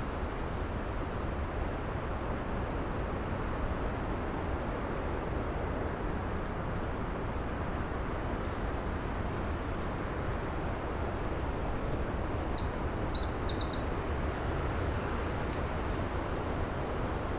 Ploumanach, France - Birds at sunset, sea and wind
Couché du soleil à Ploumanach oiseaux, mer et vent dans les arbres
Ploumanach, Sunset between the trees, waves and wind .It's Cold
/Oktava mk012 ORTF & SD mixpre & Zoom h4n
Perros-Guirec, France